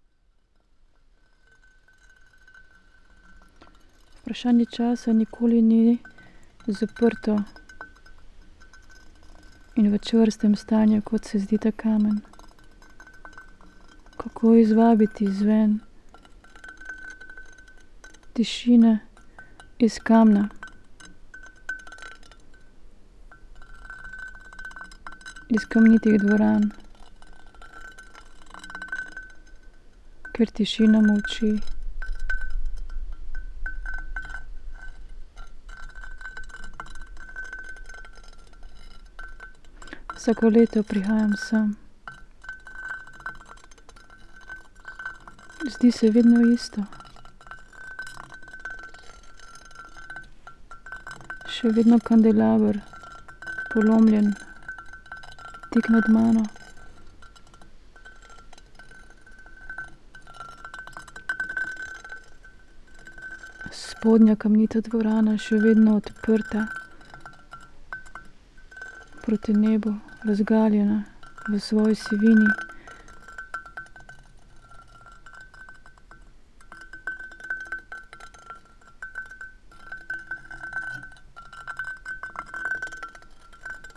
quarry, Marušići, Croatia - void voices - stony chambers of exploitation - sedimented time
the moment I wanted to stream to radio aporee, but connection was too fragile, spoken words, stone and iron
9 July 2014, ~15:00